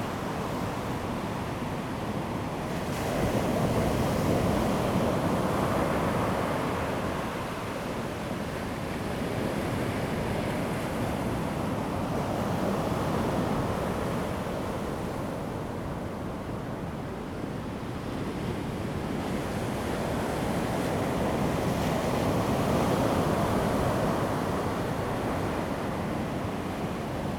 都蘭林場, Donghe Township - In the beach
In the beach, Sound of the waves, Very hot weather
Zoom H2n MS+ XY
都蘭林場 Donghe Township, Taitung County, Taiwan, 6 September 2014